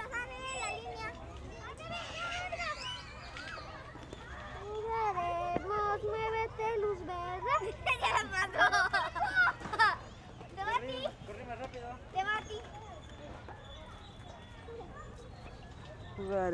Av. 4 Ote., Centro, Cholula, Pue., Mexique - Cholula - jardin public - "1-2-3 soleil..."
Cholula
dans le jardin public, ambiance "1-2-3 soleil..."